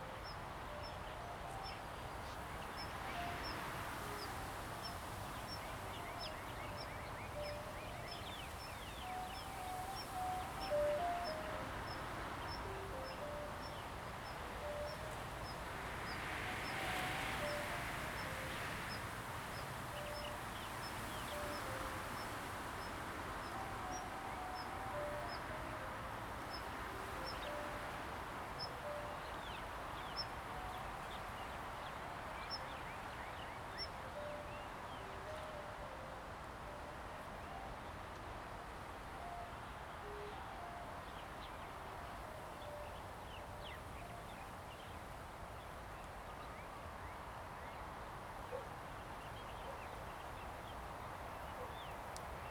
雙鯉湖溼地, Jinning Township - Birds singing and Wind
Birds singing, Wind, Distance came the sound of music garbage truck
Zoom H2n MS+XY